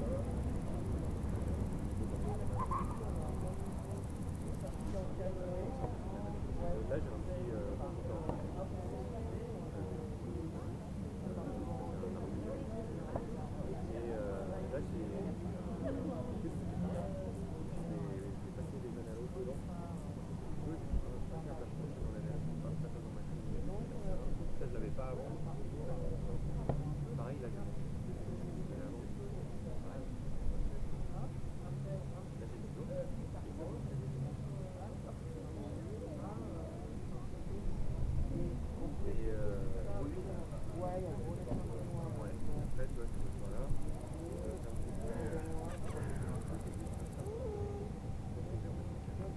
Dimanche à Vions près de la salle polyvalente lors de l'évènement code source proposé par bipolar. Quelques criquets dans l'herbe, les visiteurs et participants .
France métropolitaine, France, 18 September 2022, 14:50